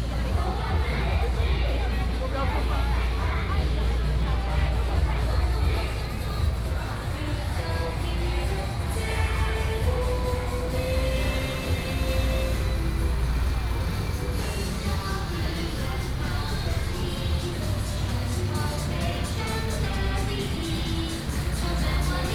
美猴橋, 信義區仁壽里, Keelung City - Festival
Festivals, Walking on the road, Variety show, Keelung Mid.Summer Ghost Festival